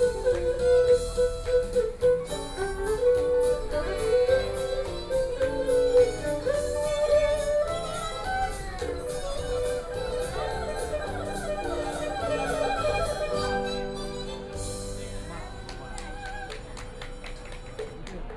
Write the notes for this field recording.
Near the entrance of the store at the rest area, A visually impaired person is using Erhu music, And from the sound of the crowd, Traffic Sound, Binaural recording, Zoom H6+ Soundman OKM II